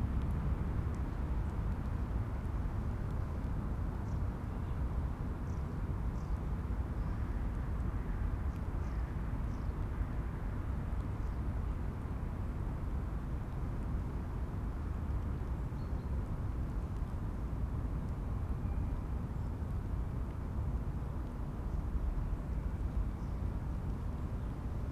berlin: heinrich-von-kleist-park - the city, the country & me: rain drops
rain drops on dry leaves, distant traffic drone
the city, the country & me: february 15, 2014